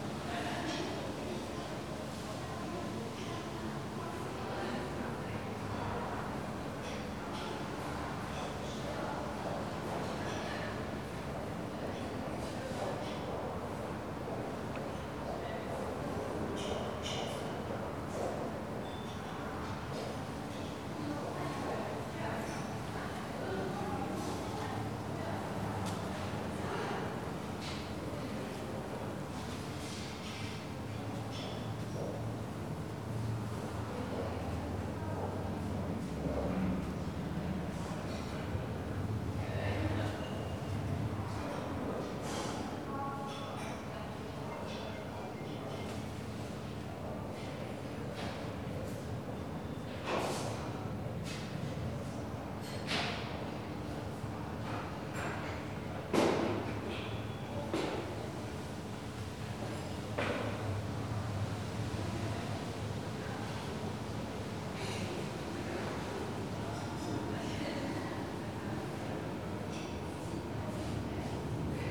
backyard of a tenement at Kantstraße. space filled with clank of silverware form a rather decent-sized canteen. hum of a AC unit. rich swoosh of thousand leaves on a big tree. visitors passing towards photo gallery in the entrance nearby. pleasantly warm, drowsy afternoon.